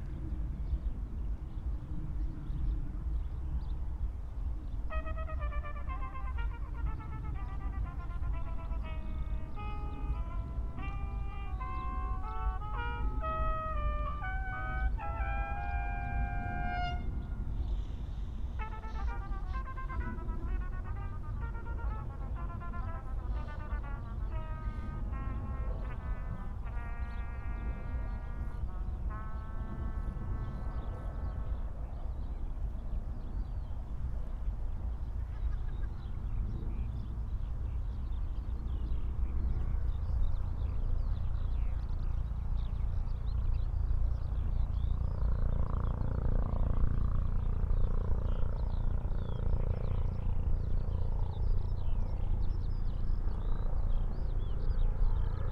Tempelhofer Feld, Berlin, Deutschland - skylarks, corn bunting, musicians, helicopter
Sunday afternoon, remote sounds from Karneval der Kulturen, musicians exercising nearby, skylarcs singing, a corn bunting (Grauammer) in the bush, a helicopter above all, etc.
(Sony PCM D50, Primo EM172)
Berlin, Germany